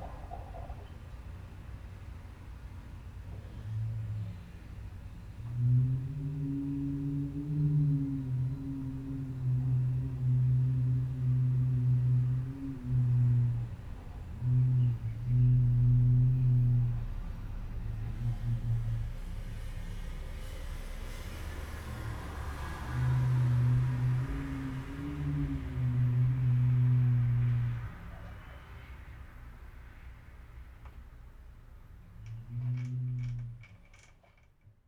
Xihu Township, Changhua County - The sound of the wind
The sound of the wind, In the hotel
Zoom H6 MS